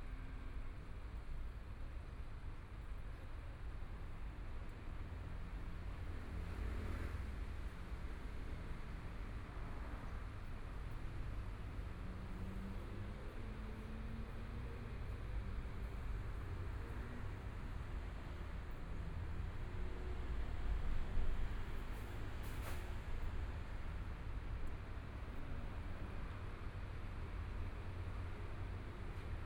Sec., Xinsheng N. Rd., Zhongshan Dist. - Intersection corner
Intersection corner, Environmental sounds, Traffic Sound, Binaural recordings, Zoom H4n+ Soundman OKM II